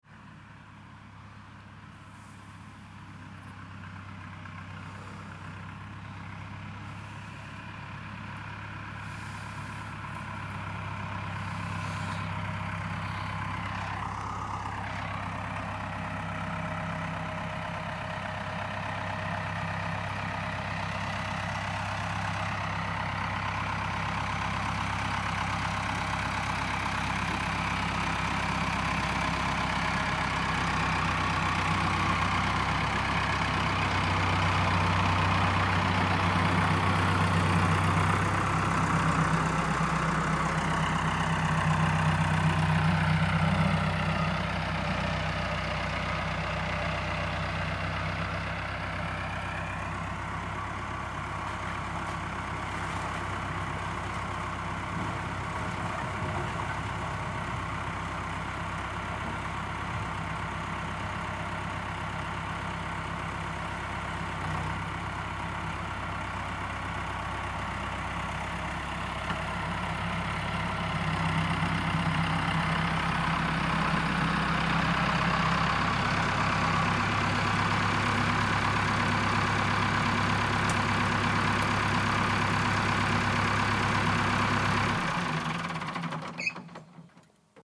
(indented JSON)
{
  "title": "tractor arriving",
  "description": "stafsäter recordings.\nrecorded july, 2008.",
  "latitude": "58.31",
  "longitude": "15.67",
  "altitude": "104",
  "timezone": "GMT+1"
}